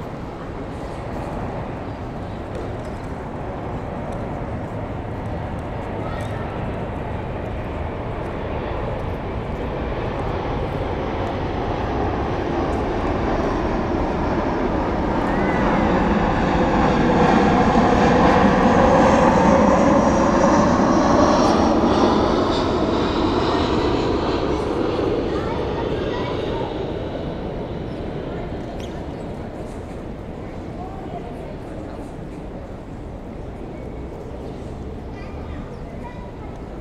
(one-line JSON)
{"title": "Willy-Brandt-Platz, Erfurt, Deutschland - Erfurt Main Station Forecourt 1", "date": "2020-07-16 08:38:00", "description": "*Recording in AB Stereophony.\nDay`s activity evolving: Whispers, trolley wheels on paved floor, footsteps, people, scattered conversations, speeding bus and tram engines and wheels, aircraft flyover at low range and subtle birds. All envents happening like structures in acousmatic music compositions.\nThe space is wide and feels wide. It is the main arrival and transit point in Thuringia`s capital city of Erfurt. Outdoor cafes can be found here.\nRecording and monitoring gear: Zoom F4 Field Recorder, RODE M5 MP, Beyerdynamic DT 770 PRO/ DT 1990 PRO.", "latitude": "50.97", "longitude": "11.04", "altitude": "199", "timezone": "Europe/Berlin"}